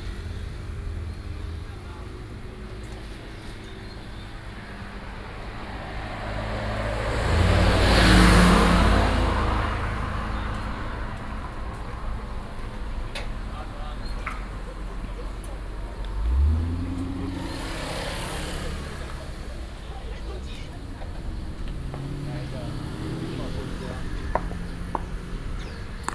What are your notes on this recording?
In front of the entrance convenience stores, Sony PCM D50